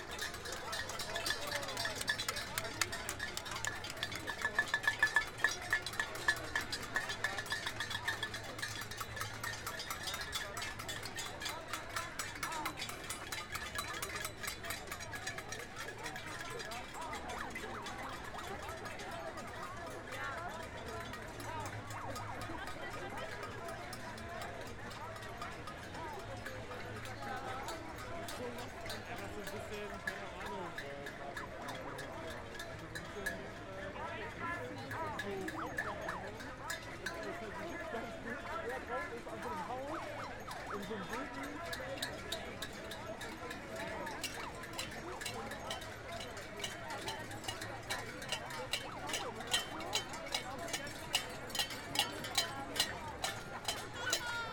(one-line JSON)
{"title": "Kottbusser Straße/Hermannplatz - Mietendeckel Protest", "date": "2021-04-15 18:25:00", "description": "After the federal constitutional court ruled the \"Mietendeckel\" (rentcap) in Berlin null, around 10.000 Berliners gathered on the same day to protest the ruling.\nRecorded in the middle of the protest on a sound device recorder with Neumann KM 184 mics.", "latitude": "52.49", "longitude": "13.43", "altitude": "42", "timezone": "Europe/Berlin"}